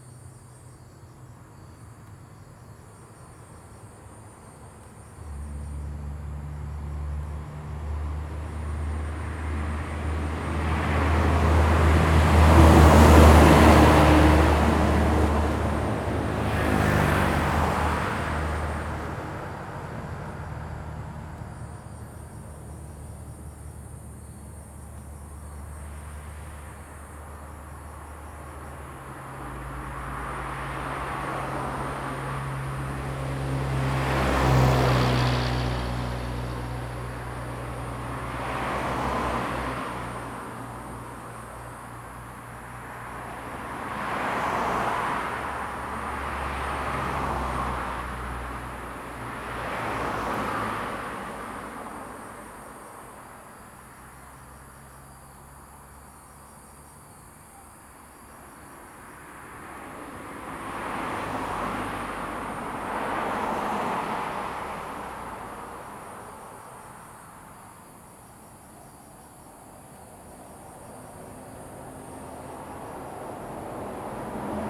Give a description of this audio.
A small village in the evening, Traffic Sound, Sound of insects, Dogs barking, Zoom H2n MS +XY